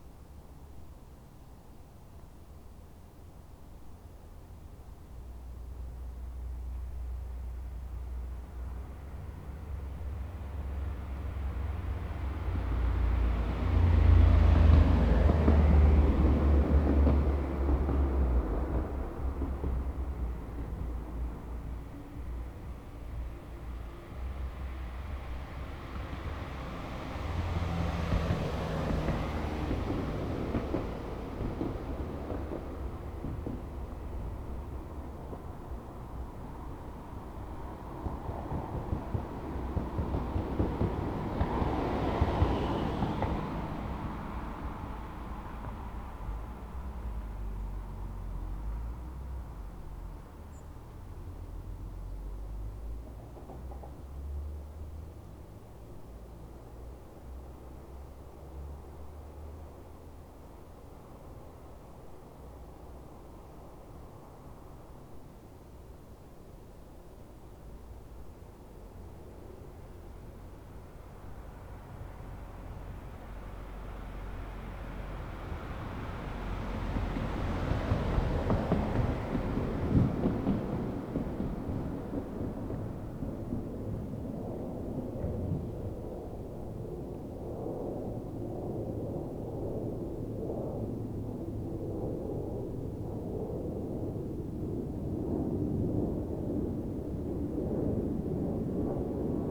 mainz-gonsenheim, weserstraße: garten - the city, the country & me: garden
cars passing over bumps
the city, the country & me: october 15, 2010